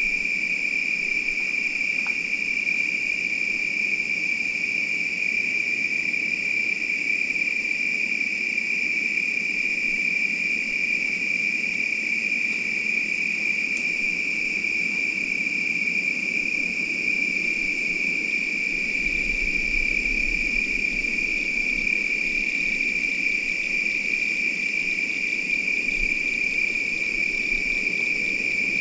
Nelson Dewey State Park - Evening chirps and trains
recorded at cart-in campsite D on my Olympus LS-10S